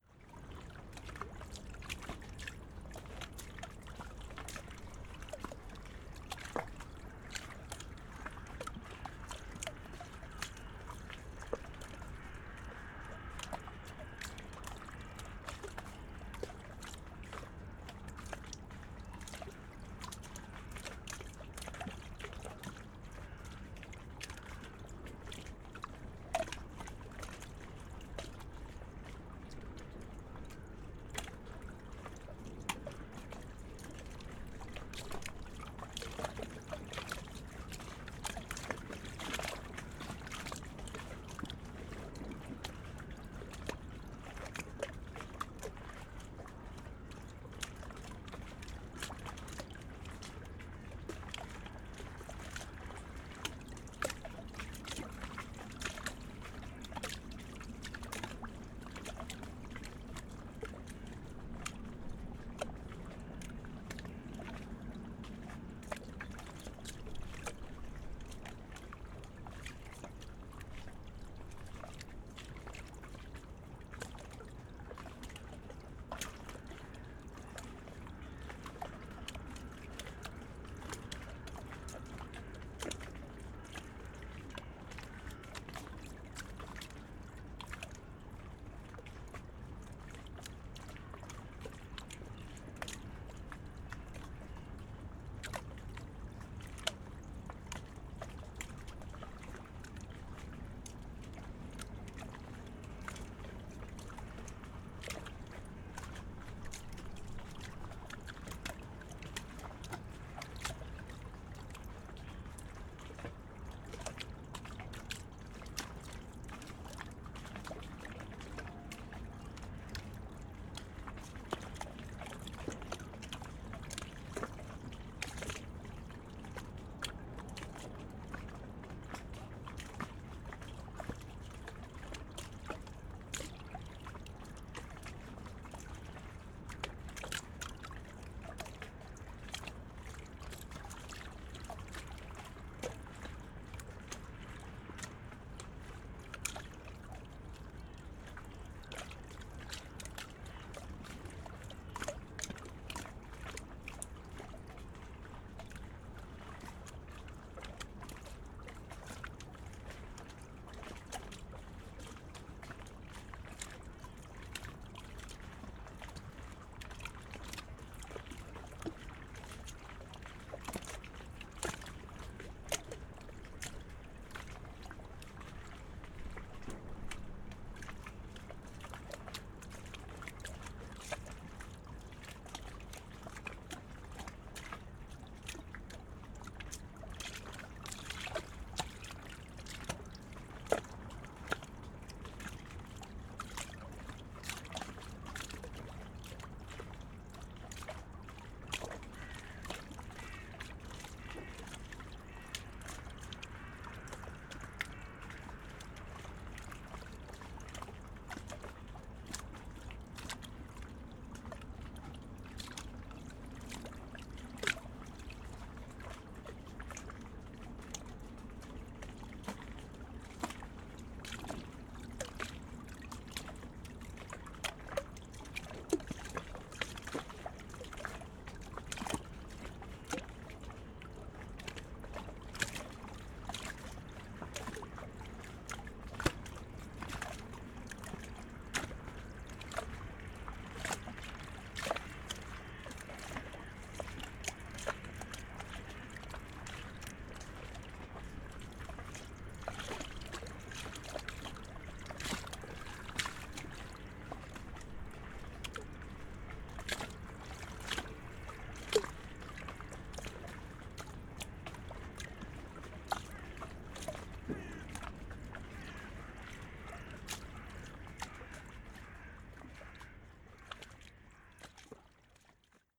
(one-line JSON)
{"title": "Plänterwald, Berlin, Germany - river spree ambience", "date": "2018-12-28 15:25:00", "description": "river spree ambience on a rather warm early winter afternoon, many dun crows at the other side of the river.\n(SD702, AT BP4025)", "latitude": "52.49", "longitude": "13.49", "altitude": "33", "timezone": "Europe/Berlin"}